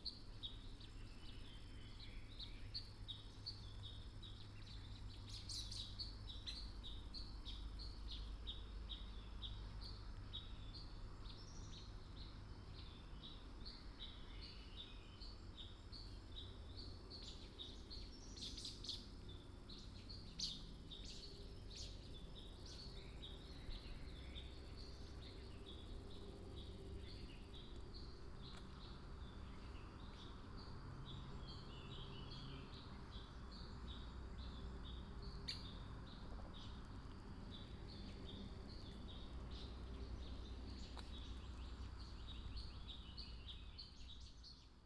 Shuishang Ln., 桃米里, Puli Township - Birds sound
Bird sounds, in the woods
Nantou County, Puli Township, 水上巷, 19 April 2016, 07:04